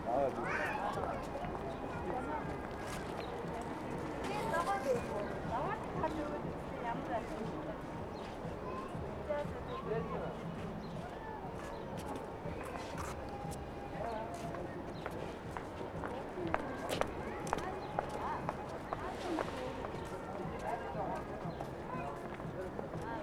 Khoroo, Ulaanbaatar, Mongolei - steps
children's day 2013, microphone on street level of the peace avenue
Border Ulan Bator - Töv, Монгол улс, 1 June 2013